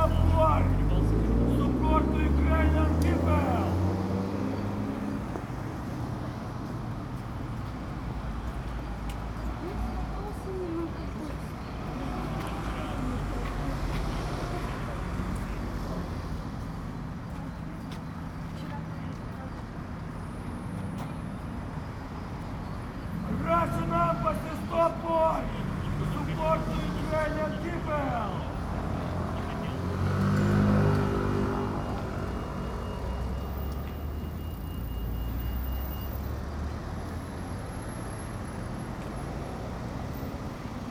Embassy of the Russian Federation - "Stop Putin, Stop the War!"
England, United Kingdom